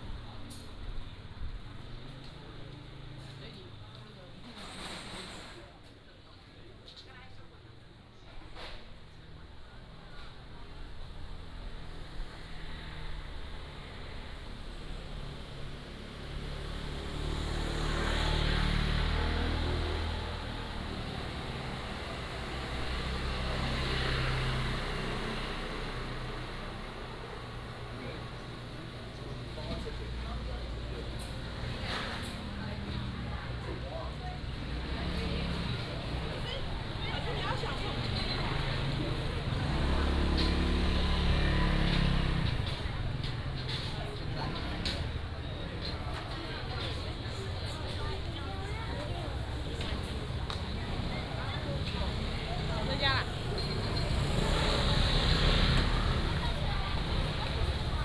Juguang Rd., Jincheng Township - Walking in the Street
Walking in the Street, Traffic Sound